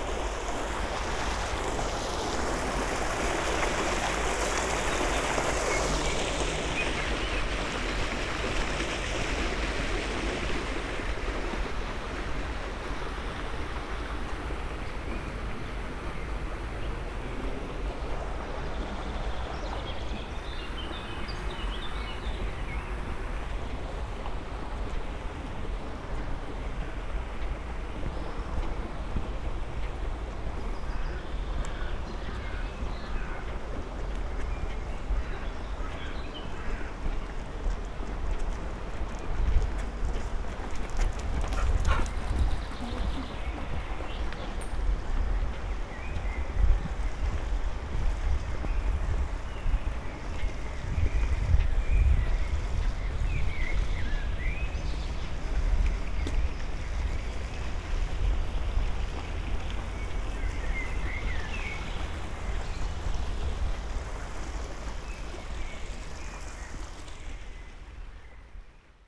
Vallée de la Pétrusse, Luxemburg - walking along the canal
walking along a canal in Vallée de la Pétrusse, the valley within the city
(Olympus LS5, Primo EM172)
Luxemburg City, Luxembourg, 5 July, 20:45